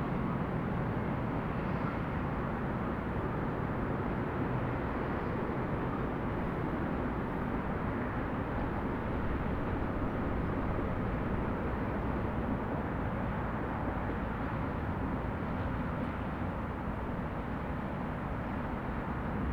Campolide, Portugal - Moinho das 3 Cruzes
Recorder in Lisbon. Between the city mess and the green mountain o Monsanto.